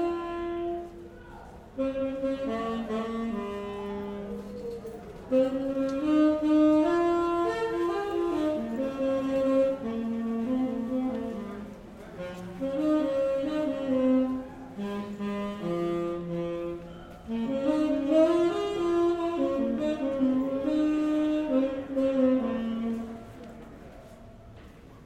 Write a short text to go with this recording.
Subway musician at 14 Street–Union Square Station. Announcements and the Q train arriving.